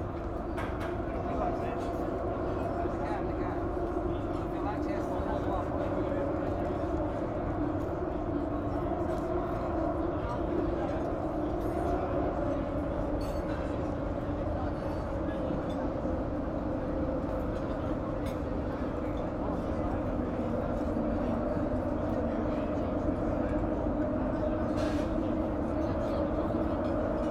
lisbon, doca da santo amaro - restaurants at quai
tourist restaurants at the marina near river tejo. hum of nearby ponte 25 de abril